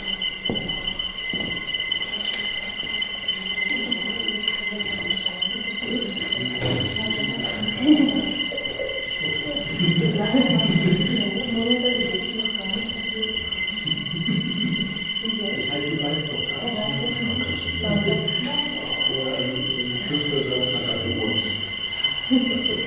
weichselstr, ohrenhoch - passage, by seiji morimoto
01.02.2009 15:45, recording based on a performance by artist seiji morimoto. installation at ohrenhoch, a gallery specialized in sound. people entering the room, talking, microphone close to a little speaker.
Berlin, Deutschland, 1 February 2009